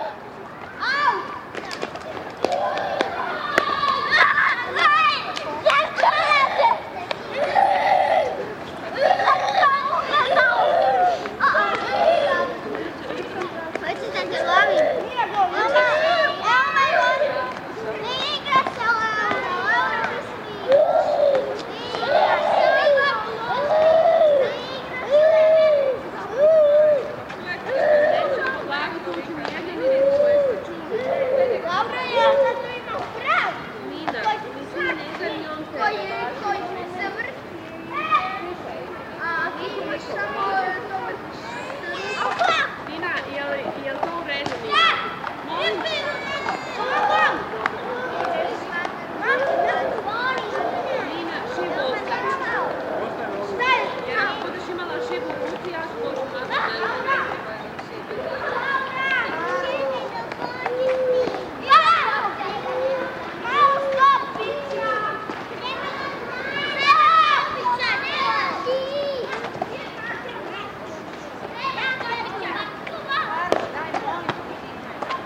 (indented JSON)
{
  "title": "Bihać, Bosnia and Herzegovina - Bihać streets",
  "date": "1996-09-17 19:00:00",
  "description": "September 1996 - Bosnia after war. Recorded on a compact cassette and a big tape recorder !\nBihać was hardly destroyed by war because of conflict (1995, july 23). Now every children play loudly in streets.",
  "latitude": "44.81",
  "longitude": "15.87",
  "altitude": "229",
  "timezone": "Europe/Sarajevo"
}